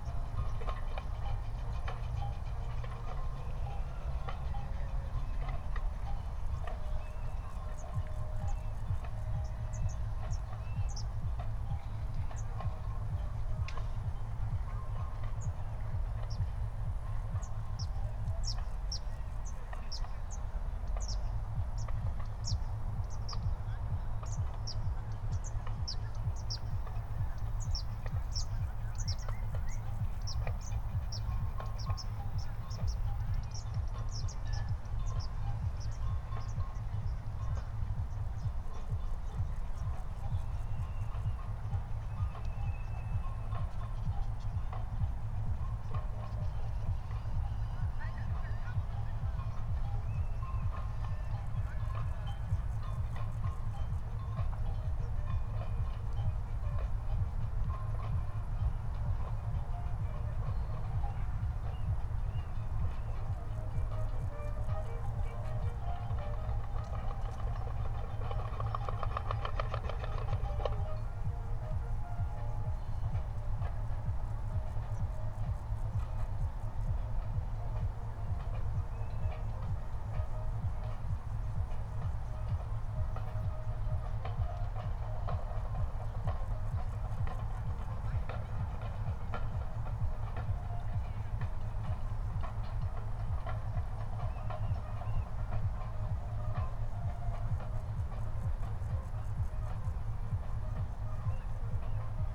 Berlin, Tempelhofer Feld - field ambience /w percussion, birds and crickets
20:37 Berlin, Tempelhofer Feld - field ambience
Deutschland, 26 July